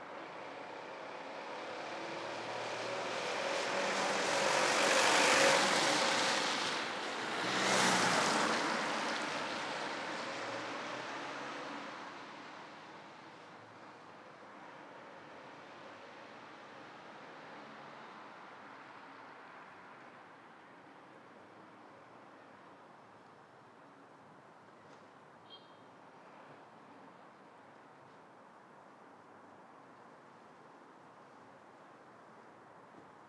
Parkhurst Road, London - Out on the Street
Late afternoon recording, sun is setting. Standing awkwardly on the street with a recorder + microphone. Shotgun mic, blimp. People seemed to be avoiding me when they sit my equipment by crossing the street.
London, UK, 5 March 2016, 17:00